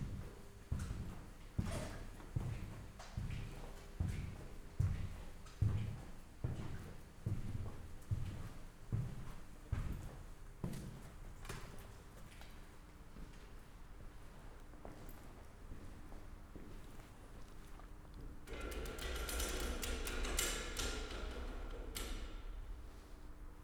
former Stasi hospital, Hobrechtsfelder Chaussee, Berlin-Buch, Deutschland - monitoring & power station, walk
strolling around in an abandoned power station of the former GDR goverment and Stasi hospital. It was a bit spooky to find one voltmeter working, showing full 230V, among dozens broken ones. Police siren suddenly, so I rather stopped recording and went invisible...
(Sony PCM D50, DPA4060)